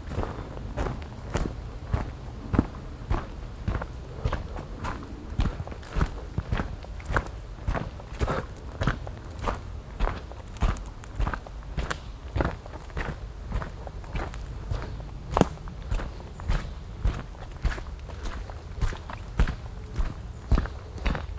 Tandel, Luxemburg - Tandel, forest walk
An einem Sommer Morgen in einem Laubwald. Ein Flugzeug überfliegt die Region und der Klang der Schritte auf steinigem und leicht matschigem Waldgrund.
On a summer morning. Walking through a broadleaf forest. The sound of a plane crossing the region the steps on the stoney and light muddy forest ground.
7 August 2012, ~09:00